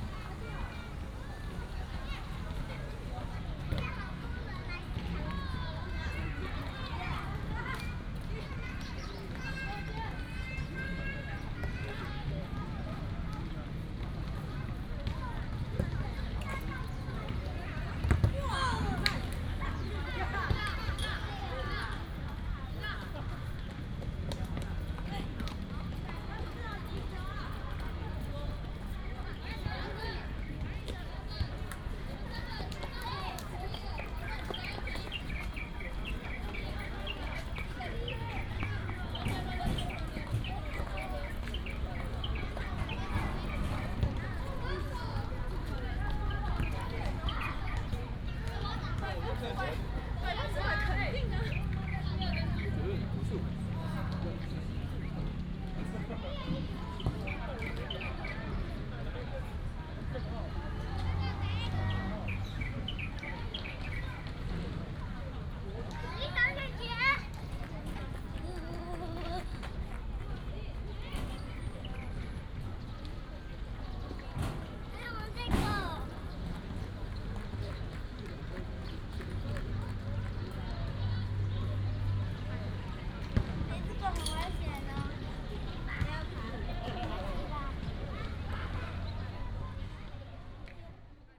Ziqiang Elementary School, Zhonghe District - In the playground
Many people In the playground, sound of the birds, Child
Zhonghe District, New Taipei City, Taiwan, 30 April, 17:35